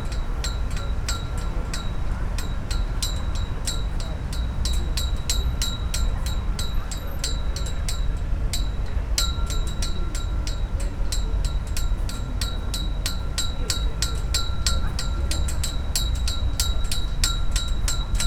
Riva, Pula, Chorwacja - flag poles
steel ropes drumming on flag poles in the wind (roland r-07)
Istarska županija, Hrvatska, 19 September 2021